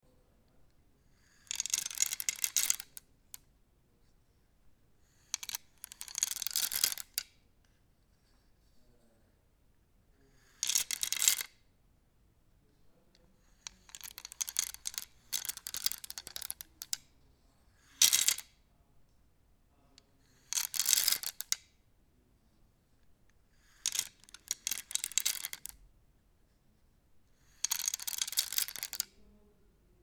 bonifazius, bürknerstr. - abacus
16.02.2009 14:15 alter abakus aus draht und holz / old abacus made of wires and wood